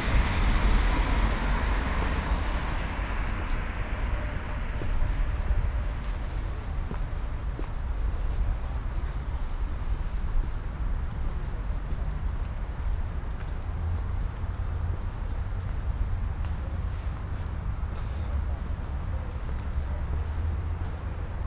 cologne, weiden, lenau-hoelderlinstrasse, gang unter bäumen
nachmittags in einfamilienhaussiedlung, ein luxus pkw startet, gang durch regennassen weg unter bäumen, hundespaziergänger, schritte auf matschigem grund
soundmap nrw - social ambiences - sound in public spaces - in & outdoor nearfield recordings
13 September, ~15:00